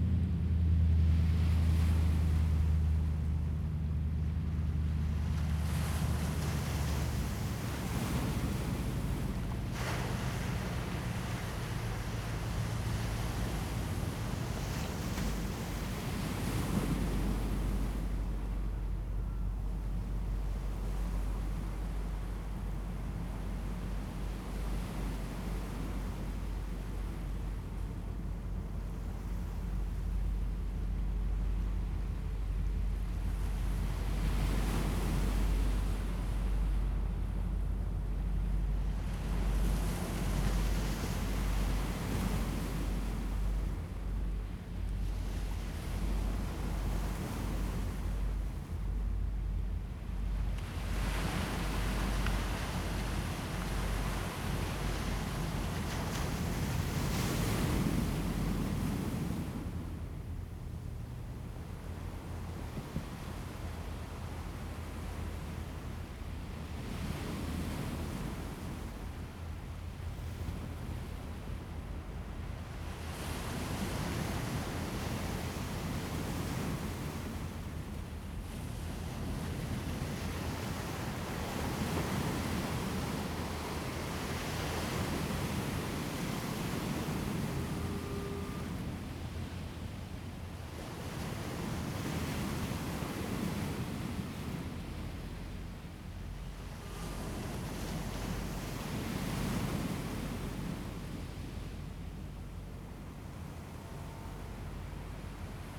富岡里, Taitung City - in the beach
Sound of the waves, The distant sound of the yacht, Fighter flight through
Zoom H2n MS +XY
6 September 2014, 09:07